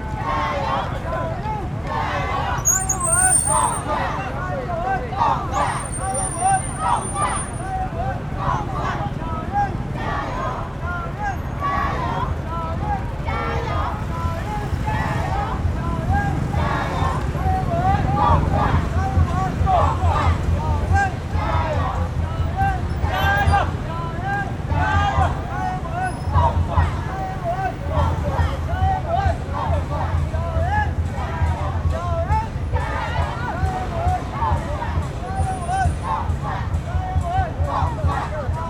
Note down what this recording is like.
Traffic Sound, Election Parade, Zoom H4n + Rode NT4